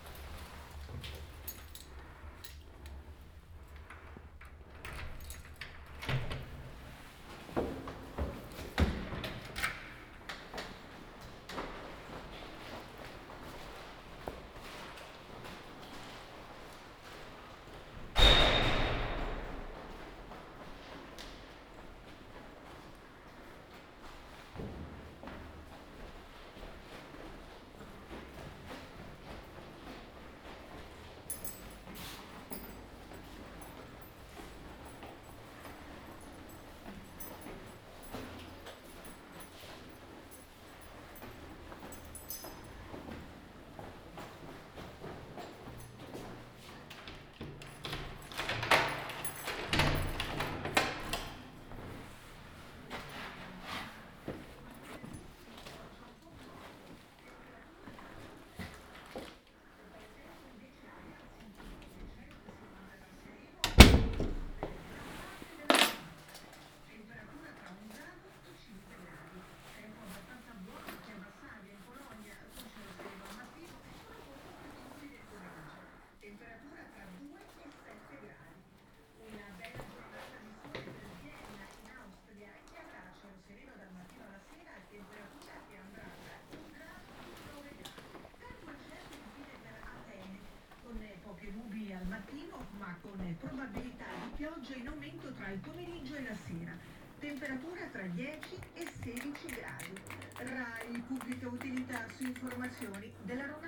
Ascolto il tuo cuore, città. I listen to yout heart, city. Several chapters **SCROLL DOWN FOR ALL RECORDINGS** - Shopping Tuesday afternoon in the time of COVID19 Soundwalk
"Shopping Tuesday afternoon in the time of COVID19" Soundwalk
Chapter XXIX of Ascolto il tuo cuore, città, I listen to your heart, city
Tuesday March 31 2020. Shopping in the supermarket at Piazza Madama Cristina, district of San Salvario, Turin 22 days after emergency disposition due to the epidemic of COVID19.
Start at 4:07 p.m., end at h. 4:56 p.m. duration of recording 48’43”
The entire path is associated with a synchronized GPS track recorded in the (kml, gpx, kmz) files downloadable here:
Piemonte, Italia, 31 March 2020